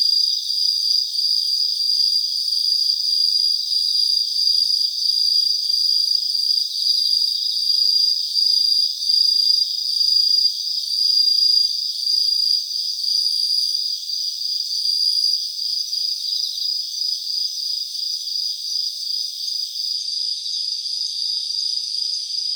Takano, Ritto City, Shiga Prefecture, Japan - Crickets in Takano Playground
Crickets at night in the trees between Takano Shrine and Takano Playground. Note: Traffic noise was reduced with a high-pass filter in Audacity.
8 September 2013